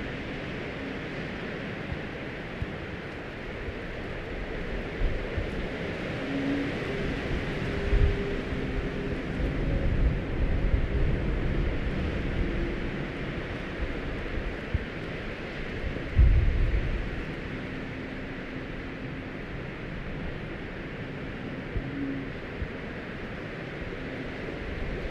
Biskupská kopa - wind ocarina on transmitter mast
Wonderful melodies made by wind playing on parabols of trasmitter mast